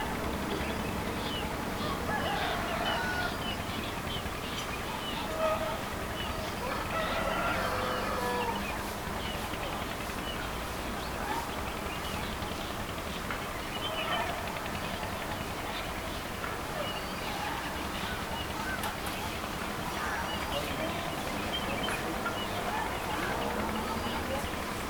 dawn in Munnar - over the valley 6
This was it. Hope you like this audio trip over the valley of Munnar
5 November, ~08:00